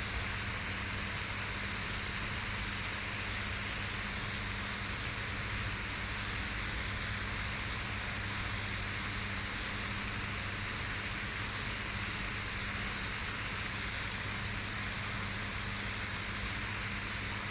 Shap, Penrith, UK - Rain on power lines
rain causing power lines to discharge. Zoom H2n.
England, United Kingdom, 3 January 2022